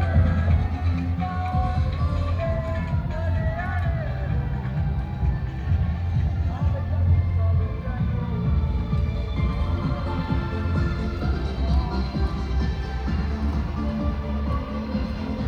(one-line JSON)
{"title": "Rue du Pere Boiteau, Réunion - 20200623 21H corteges electoral CILAOS", "date": "2020-06-23 21:00:00", "latitude": "-21.13", "longitude": "55.47", "altitude": "1204", "timezone": "Indian/Reunion"}